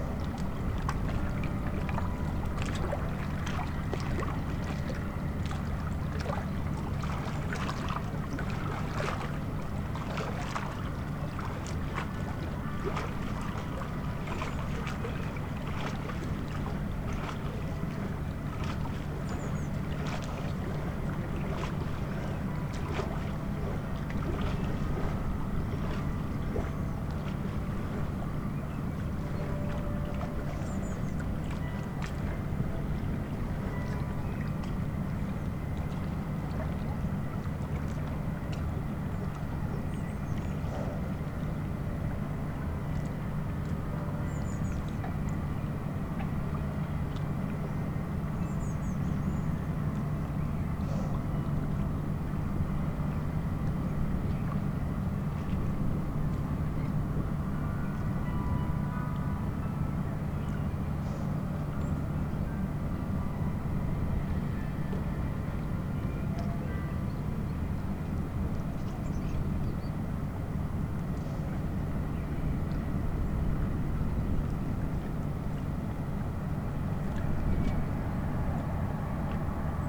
lemmer, vuurtorenweg: parkplatz - the city, the country & me: parking area vis-à-vis of a concrete factory
noise of the concrete factory, carillon, lapping waves, birds
the city, the country & me: june 20, 2011